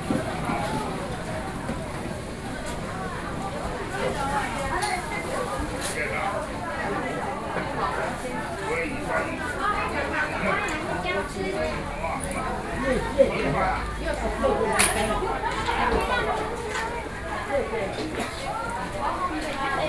貴陽街二段164巷, Taipei City - Traditional markets